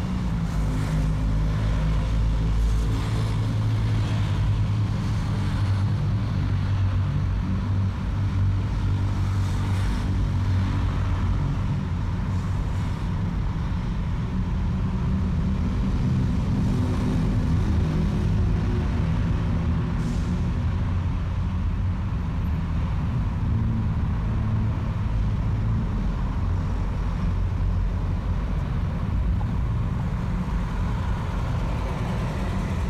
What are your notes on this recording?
In this audio you will hear many sounds such as the sounds of birds, passing trucks, passing cars, motorcycles and wind.